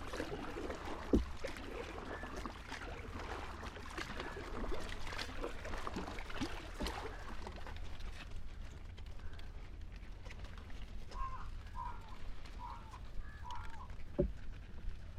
khakkhaturret - kerala backwaters - paddle boat on the back waters
Kashi Art Residency, Khakkhaturret Island, Kerala, India